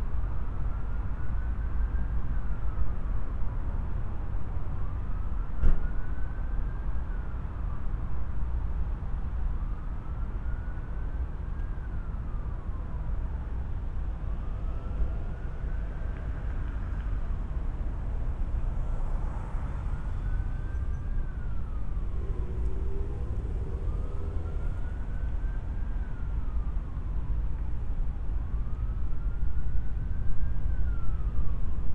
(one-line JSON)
{"title": "S Cobb Dr SE, Smyrna, GA - Great Clips parking lot", "date": "2021-01-20 17:02:00", "description": "The parking lot in front of a Great Clips, which is part of a larger shopping center. Lots of vehicle sound can be heard, including car horns and some sirens near the end of the recording. There are also some less prominent sounds from the surrounding stores and from the people walking in the parking lot.\n[Tascam Dr-100mkiii & Roland CS-10EM binaural mics w/ foam covers & fur for wind reduction]", "latitude": "33.84", "longitude": "-84.50", "altitude": "310", "timezone": "America/New_York"}